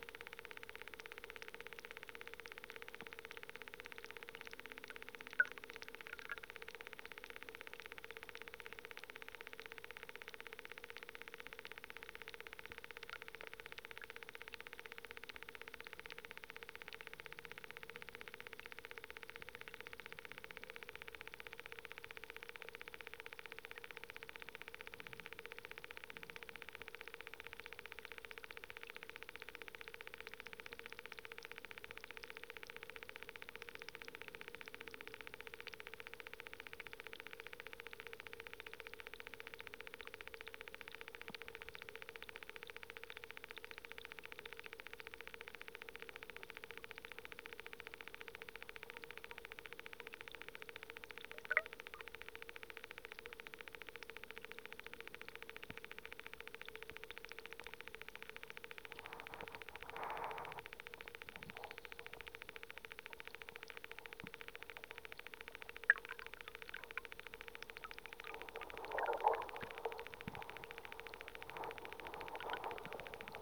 Utena, Lithuania, underwater machanica
hydrophone recording in the swamp. have no idea about the source of the sound. and even there you can hear the sound of traffic:)